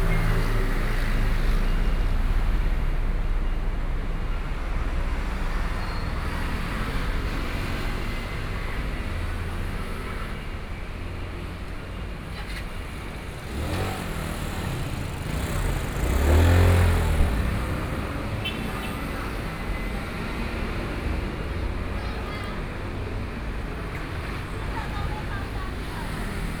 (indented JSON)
{
  "title": "Jianguo 3rd Rd., Sanmin Dist. - walking on the Road",
  "date": "2014-05-16 11:09:00",
  "description": "walking on the Road, The main road, Traffic Sound, Pedestrians, Various shops voices",
  "latitude": "22.64",
  "longitude": "120.29",
  "altitude": "10",
  "timezone": "Asia/Taipei"
}